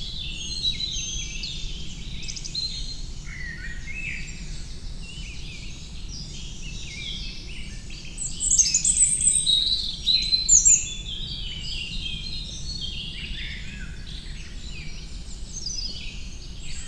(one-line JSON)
{"title": "Montigny-le-Tilleul, Belgique - Birds in the forest", "date": "2018-06-03 08:45:00", "description": "European Robin solo.", "latitude": "50.37", "longitude": "4.35", "altitude": "203", "timezone": "Europe/Brussels"}